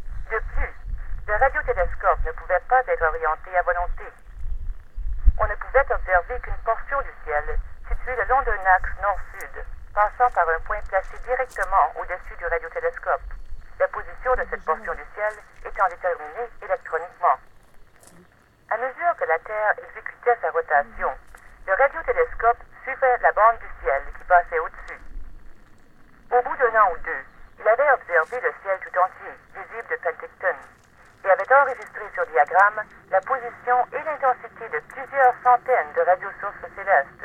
Dominion Radio Astrophysical Observatory Okanagan-Similkameen D, BC, Canada - Introduction at the gate
An observatory hidden in the mountains, discovered by accident during a drive through Canada.
One can visit the site alone by walking around outside the facility, thanks to a series of weather-worn boxes that playback audio recordings in French-Canadian or English explaining the history of the now closed observatory.
This is one of the first boxes near the entrance of the place.
23 July 2011, 15:00